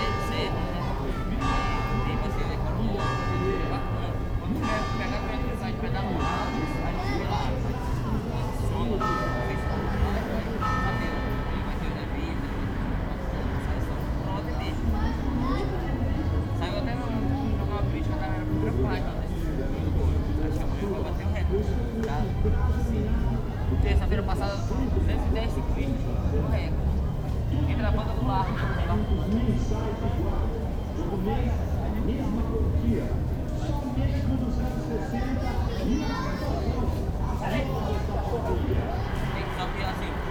At 19h30 people use to walk, stand or seat in the three main spots of the Amazonas theater plaza: the steps of the fountain (student, low money), Armando Bar intellectuals & other (large liver), Tacaca da Giselle (large families, Tacaca is a kind of soup eatable/drinkable in a cuia calabash, and made of cassava starch, cassava juice, jambu and shrimps, especially consumed at nightfall).
In the ambiance sound track recorded near Tacaca da Giselle we hear small electrics motorbikes, playing children, distant cars, various distant musics, the church bells at 19h30, and people walking, speaking, shouting.